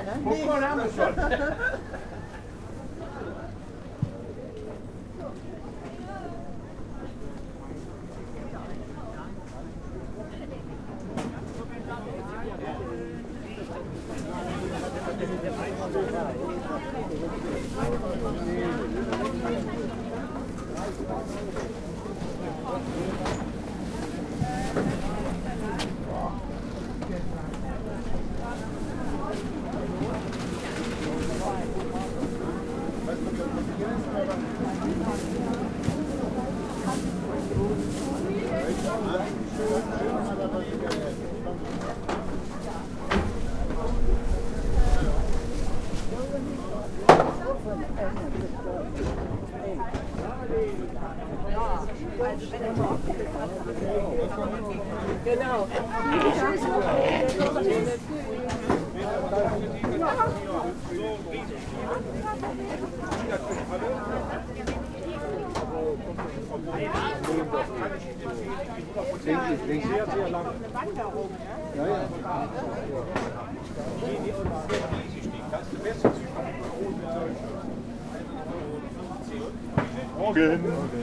{"title": "remscheid: theodor-heuss-platz - the city, the country & me: weekly market", "date": "2013-11-09 10:52:00", "description": "weekly market, soundwalk\nthe city, the country & me: november 9, 2013", "latitude": "51.18", "longitude": "7.19", "altitude": "367", "timezone": "Europe/Berlin"}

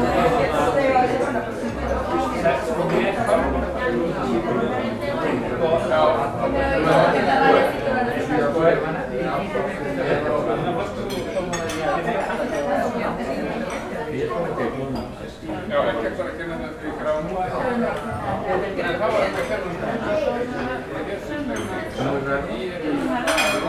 {"title": "neoscenes: Kaffihús Vesturbæjar", "date": "2017-12-21 20:05:00", "description": "Tea and chocolate cake for $17, hmm, tourism has indeed fucked Iceland up these days! Waiting for Palli to show up for our first f2f convo in the 20 years of knowing each other! Networking!", "latitude": "64.14", "longitude": "-21.96", "altitude": "11", "timezone": "Atlantic/Reykjavik"}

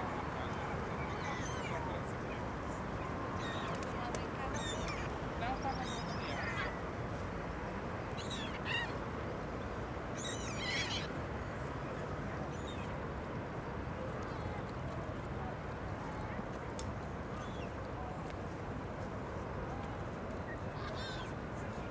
{"title": "Afurada, Vila Nova de Gaia, Portugal - Estuario do Douro", "date": "2013-04-20 17:30:00", "description": "Estuario do Douro. Mapa Sonoro do Rio Douro. Douros estuary. Douro River Sound Map.", "latitude": "41.14", "longitude": "-8.67", "altitude": "8", "timezone": "Europe/Lisbon"}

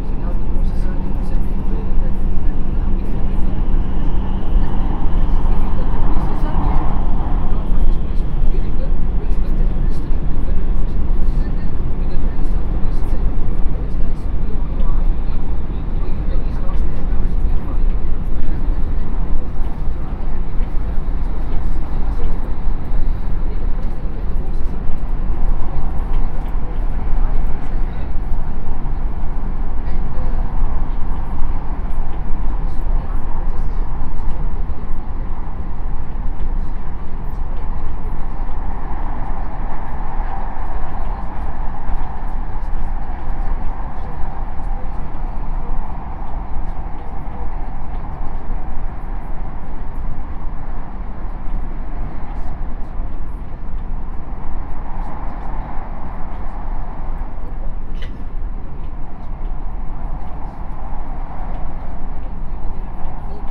{"title": "Sandton, South Africa - on a Gautrain into Jozi...", "date": "2016-11-07 17:11:00", "description": "sounds and voices on a Gautrain from Pretoria into Johannesburg Park Station...", "latitude": "-26.11", "longitude": "28.05", "altitude": "1654", "timezone": "GMT+1"}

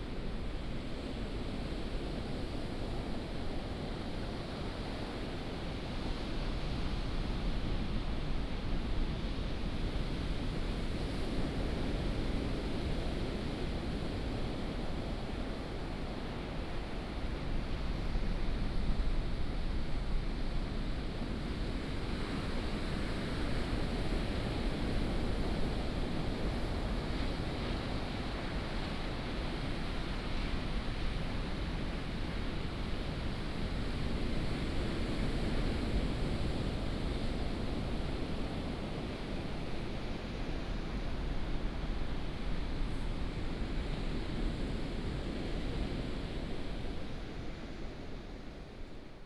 {"title": "牡丹鄉台26線, Pingtung County - On the coast", "date": "2018-04-02 13:34:00", "description": "On the coast, Sound of the waves, traffic sound", "latitude": "22.16", "longitude": "120.89", "altitude": "13", "timezone": "Asia/Taipei"}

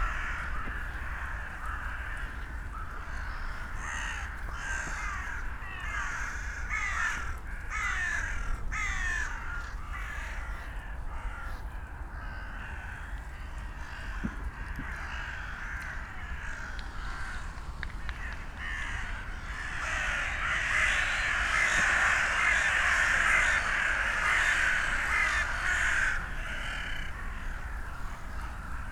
{"title": "Tempelhofer Feld, Berlin, Deutschland - dun crows gathering", "date": "2018-12-31 14:50:00", "description": "walking around, hundreds of dun crows (Nebelkähen) and a few rooks (Saatkrähen) gathering on this spot of Tempelhofer Feld. For not always clear reasons, e.g. fireworks, hikers clapping, they get very excited from time to time. Interesting variations of calls and other sounds.\n(Sony PCM D50, DPA4060)", "latitude": "52.48", "longitude": "13.40", "altitude": "48", "timezone": "Europe/Berlin"}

